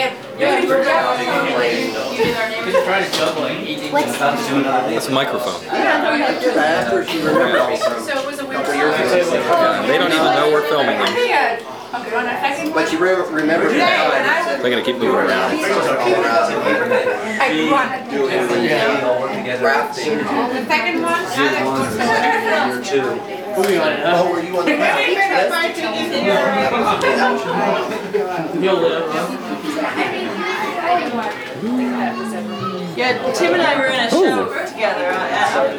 neoscenes: old friends, barbeque, Boulder
CO, USA, 25 April 2008